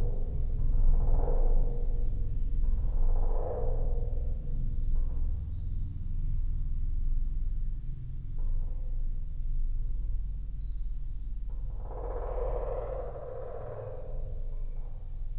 Antalieptė, Lithuania, in the well
some well at pumping station. I have managed to put my microphones into it...